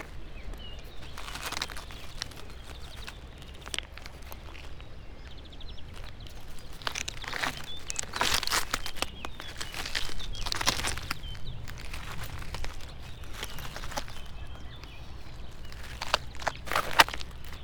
river Drava, Dvorjane - gravel walk, spring
Starše, Slovenia, 10 May 2015